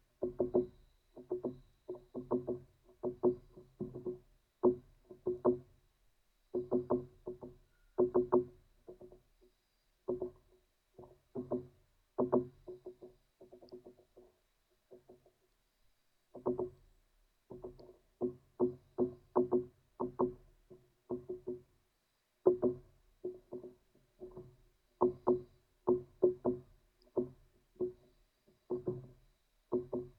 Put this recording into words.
woodpecker morning on the top of pine-tree. recorded with contact microphone